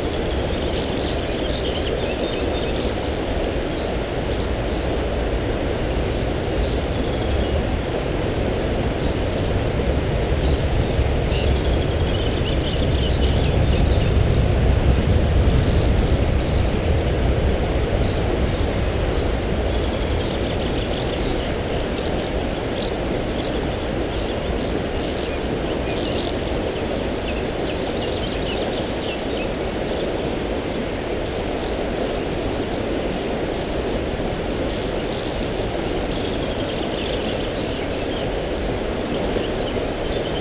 Volarje, Tolmin, Slovenia - Soča near vilage Volarje
River soča and some birds singing.
Recorded with ZOOM H5 and LOM Uši Pro, Olson Wing array. Best with headphones.
Slovenija